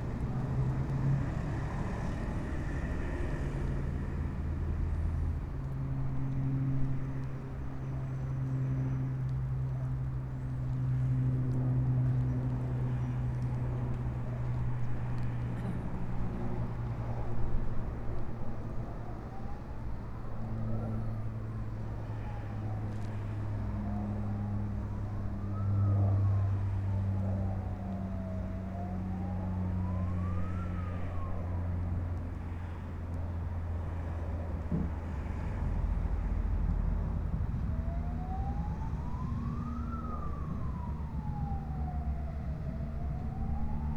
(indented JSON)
{
  "title": "Latvia, Jurmala, elecric train in the centre",
  "date": "2012-08-15 10:00:00",
  "latitude": "56.97",
  "longitude": "23.80",
  "altitude": "4",
  "timezone": "Europe/Riga"
}